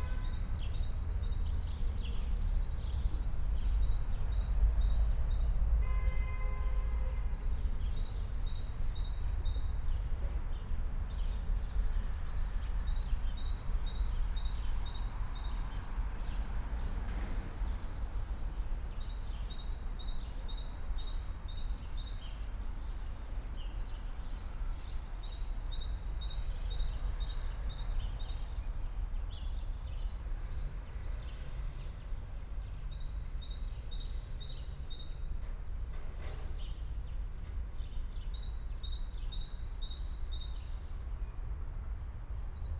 on a bench at nordufer, listening to city hum, distant sounds and a train passing-by on the bridge above
(SD702, DPA4060)